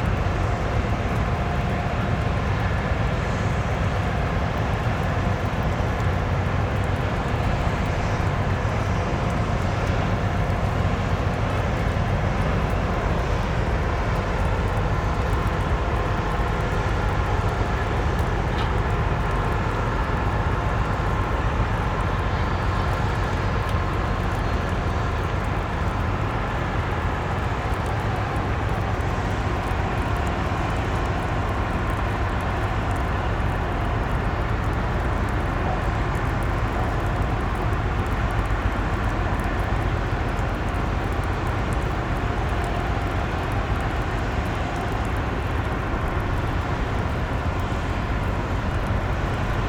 Kesterenstraat, Rotterdam, Netherlands - Vessels
A few vessels anchored close to the shore make a constant mechanic noise. You can also listen to the rain and some seagulls. Recorded with zoom H8